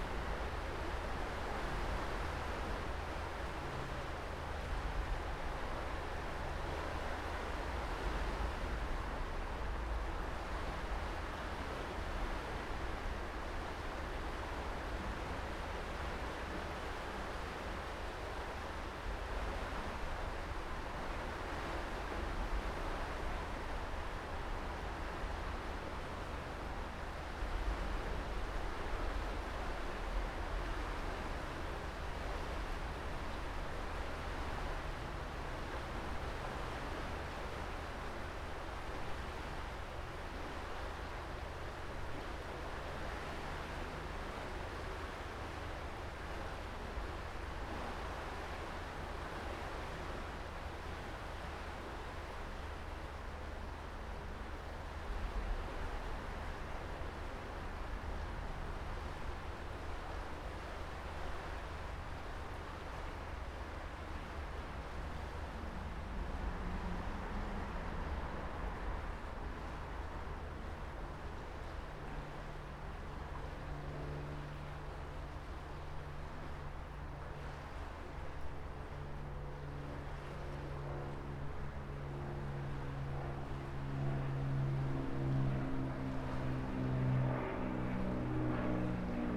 Nussdorfer Schleuse - Nussdorfer Lock - Opening and closing of Nussdorfer Lock for MS Vienna
Recorded at Anton Schmid Promenade under Nussdorfer Schleusen Bridge with a Zoom H1 and dead kitten. ship pass by around 15:00.